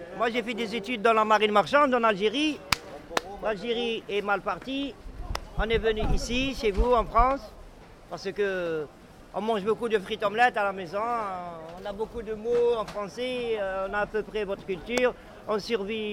Rue du Marché des Capucins, Marseille, France - Marché de Noailles - Marseille
Marseille
Marché de Noailles, un après midi du mois d'août.
ZOO H3VR
France métropolitaine, France, 25 August 2020, 16:30